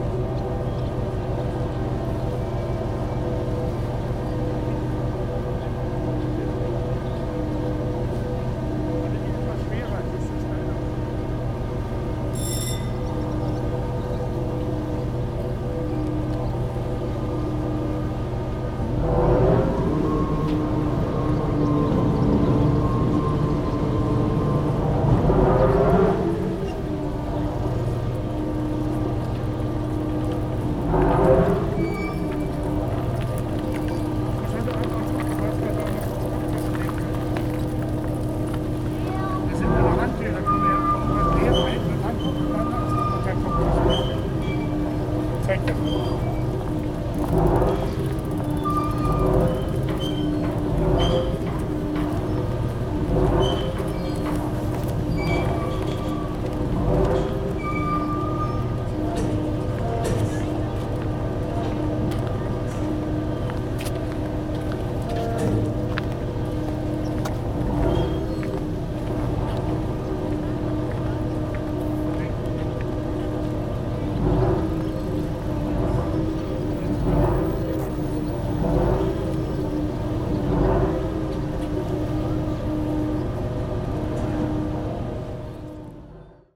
Recordist: Saso Puckovski
Description: Close to the harbour next to an industrial crane. Industrial noises, engines, breaking waves, people talking, bikes and birds in the distance. Recorded with ZOOM H2N Handy Recorder.